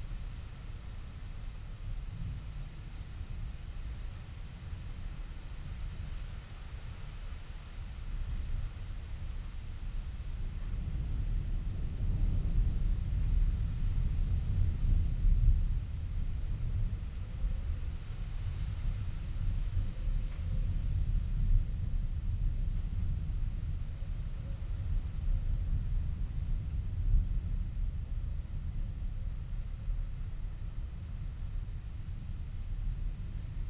Luttons, UK - inside church ... outside thunderstorm ...
inside church ... outside thunderstorm ... open lavalier mics on T bar on mini tripod ... background noise ...
Helperthorpe, Malton, UK, 26 July 2018